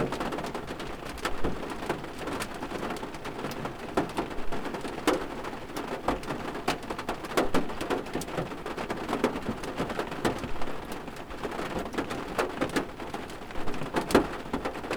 neoscenes: rain on car roof
CO, USA, September 2011